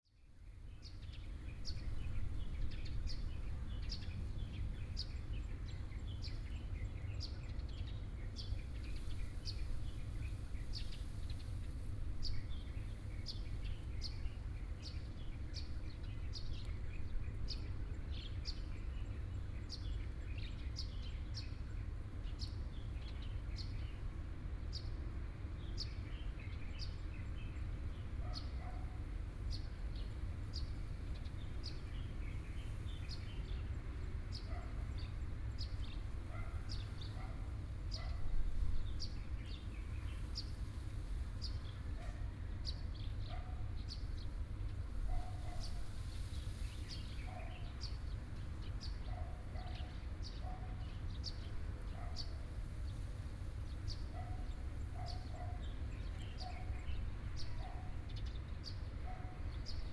In the temple plaza, Hot weather, Traffic Sound, Birdsong, Small village